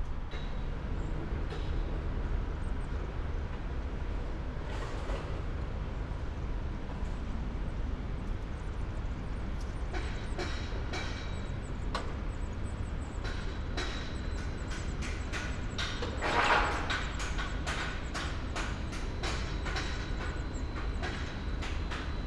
Elgar Rd S, Reading, UK - Construction sounds of 112 new apartments across the river from my house
Across the river from me they are building 112 apartments. Sony M10 with Primo boundary array.